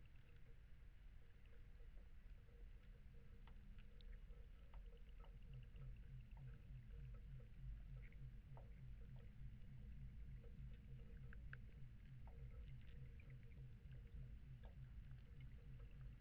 Segbroeklaan, Den Haag - hydrophone rec at a little dock, next to the bridge
Mic/Recorder: Aquarian H2A / Fostex FR-2LE